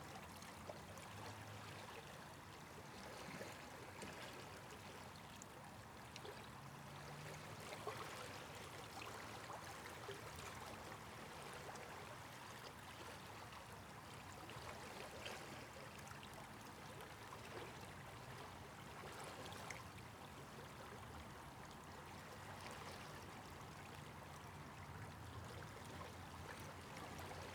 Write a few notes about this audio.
There was a dry spell of weather this morning, so I decided to make the most of it and go out recording. The location was a estuary, and the tide was on the way in (high tide around 3pm). After walking around and making a few recordings, I came across a inlet into what is called "Carnsew Pool", as the tide was coming in the water was rushing past me, swirling and bubbling (kind of), the current looked extremely strong. The location has changed since google did the satellite shots, above my location is a inlet that leads to the other body of water. The weather was cloudy, dry with a slight breeze. Slight post-processing - Used EQ to remove traffic hum. Microphones - 2 x DPA4060, Recorder - Tascam DR100